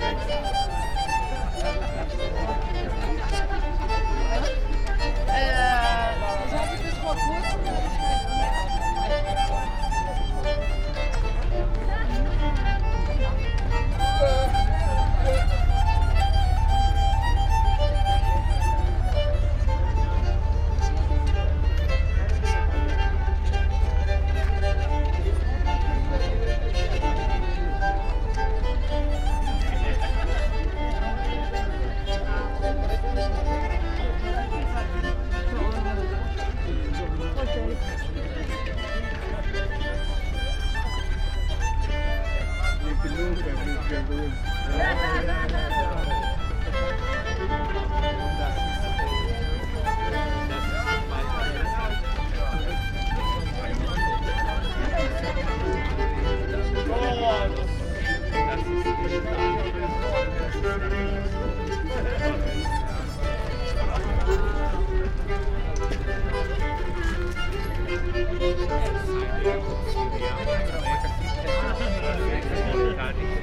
berlin, maybachufer: speakers corner neukölln - busker with an ancient instrument
Berlin Maybachufer, weekly market, busker, ambience.
field radio - an ongoing experiment and exploration of affective geographies and new practices in sound art and radio.
(Tascam iXJ2 / iPhoneSE, Primo EM172)
Berlin, Germany, 7 May 2019, 15:00